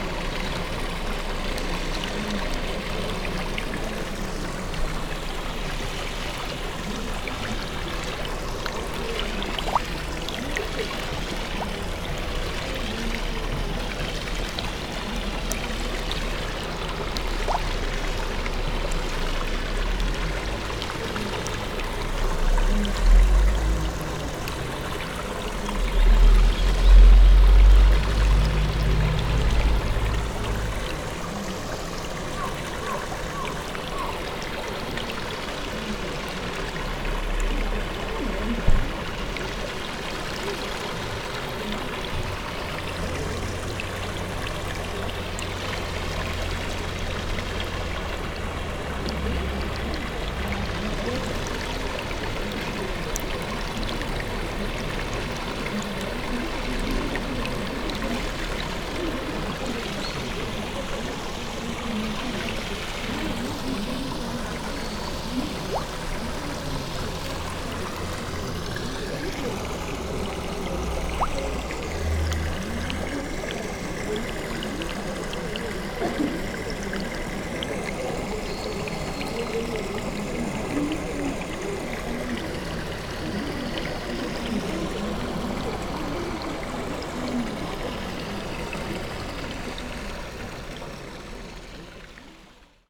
pond, Taizoin, zen garden, Kyoto - small bridge, golden fishes
blowing bubbles ... want to swallow swinging colorful microphones
Kyoto Prefecture, Japan, 4 November 2014